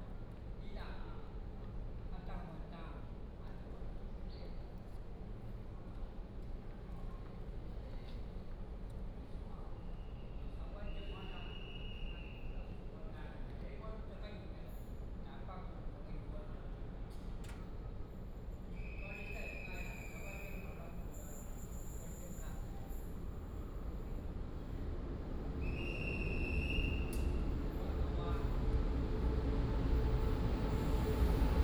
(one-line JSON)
{"title": "桃園火車站, Taiwan - At the station platform", "date": "2017-09-26 12:16:00", "description": "At the station platform, Bird call, Station information broadcast, The train arrived at the stop, Binaural recordings, Sony PCM D100+ Soundman OKM II", "latitude": "24.99", "longitude": "121.31", "altitude": "100", "timezone": "Asia/Taipei"}